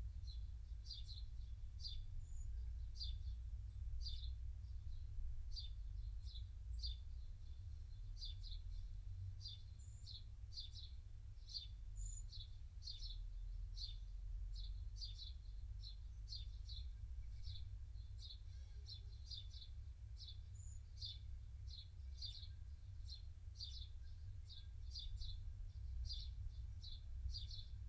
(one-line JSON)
{"title": "Luttons, UK - thunderstorm in a bottle ... sort of ...", "date": "2019-07-26 18:30:00", "description": "thunderstorm in a bottle ... sort of ... pair of lavalier mics inside a heavy weight decanter ... bird calls ... song from ... song thrush ... blackbird ... house sparrow ...", "latitude": "54.12", "longitude": "-0.54", "altitude": "76", "timezone": "Europe/London"}